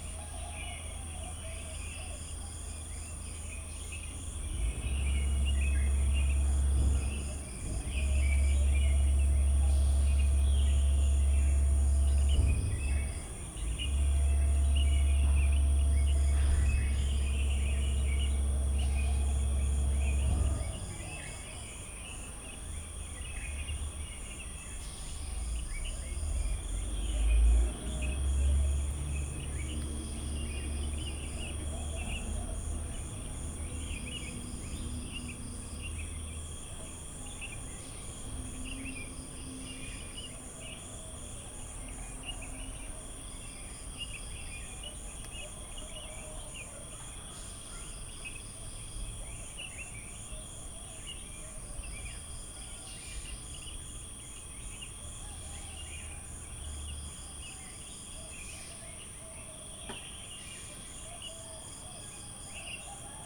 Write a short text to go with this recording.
Early morning atmosphere at a resort in Pai. Crickets, birds and all kinds of sizzling animals, but also some traffic going by in a distance. Slow and relaxing, though not out of the world.